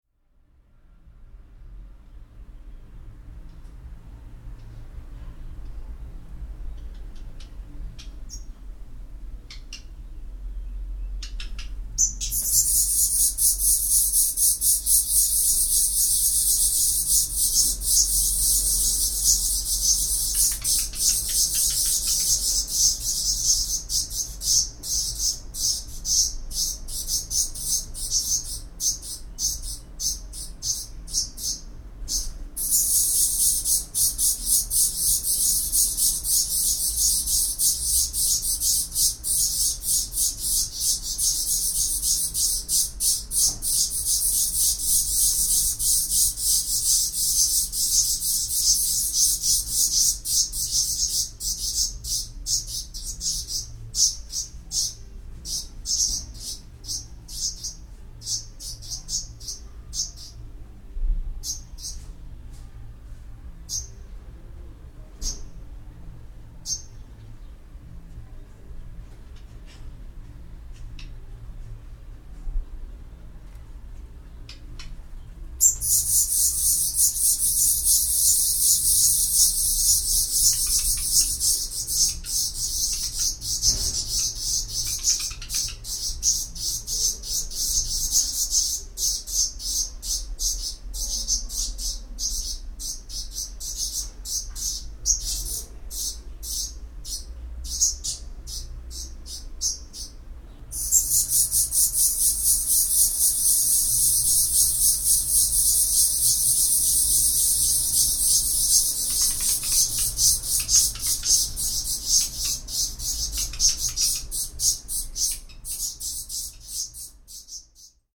Hausrotschwanz nistet in der Isländische Straße
Der Hausrotschwanz nistet in Mauernischen und sogar auf Balkonen. Sein Ruf klingt rauh und abgehackt: Mancheiner hört ein Hik Tek Tek heraus.